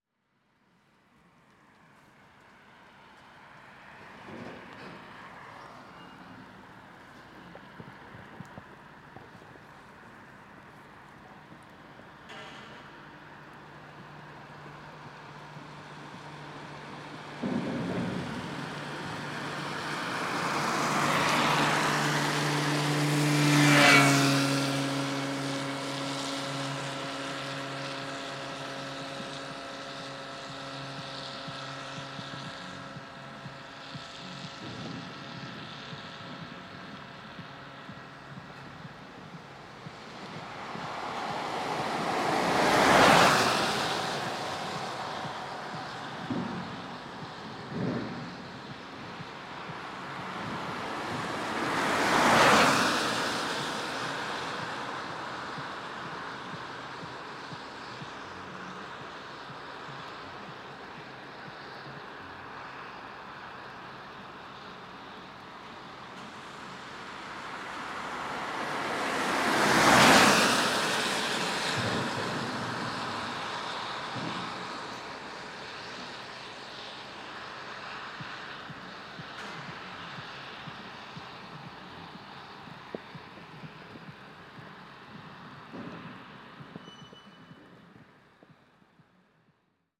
Av. de la Libération, Malmedy, Belgique - Sounds from the N68
Wet road, cars and a scooter passing by.
Sounds coming from the hangar also, somebody moving metallic things.
Tech Note : Sony PCM-D100 internal microphones, wide position.